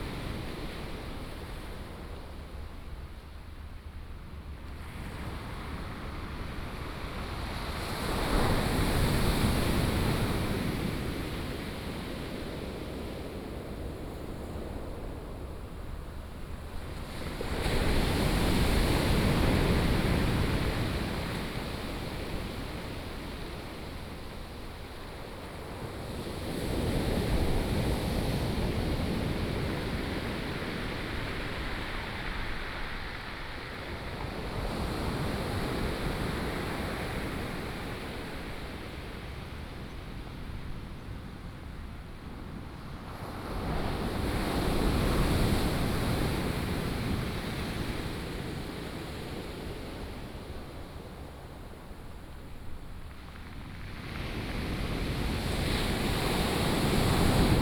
太麻里海岸, Taimali Township - Waves
Waves, at the beach